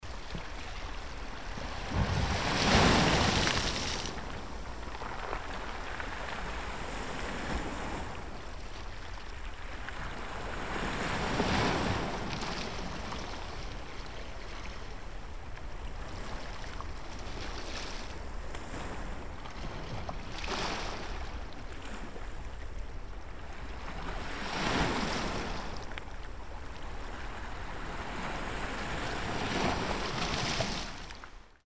Kantrida, Rijeka, Snow near the sea
Sea splashing on the beach covered full of snow.